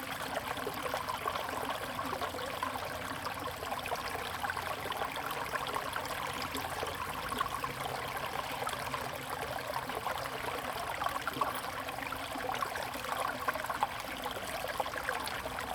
見學中心紙教堂, 桃米里 Puli Township - Aqueduct
Aqueduct, Flow sound
Zoom H2n MS+XY
Nantou County, Puli Township, 桃米巷52-12號, 19 May